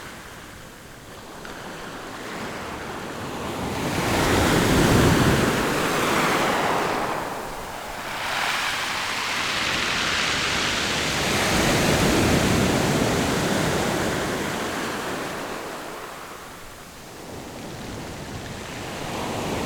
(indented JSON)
{
  "title": "豐原里, Taitung City - the waves",
  "date": "2014-09-04 14:42:00",
  "description": "At the seaside, Sound of the waves, Very hot weather\nZoom H6 XY+Rode Nt4",
  "latitude": "22.72",
  "longitude": "121.12",
  "altitude": "7",
  "timezone": "Asia/Taipei"
}